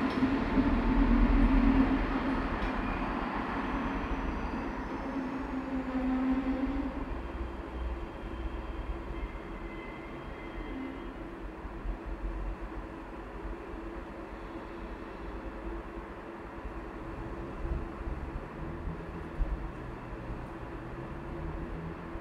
Passenger Train sound recorded on the train station in Hameln.
TASCAM DR100-MK3
LOM MikroUSI Microphones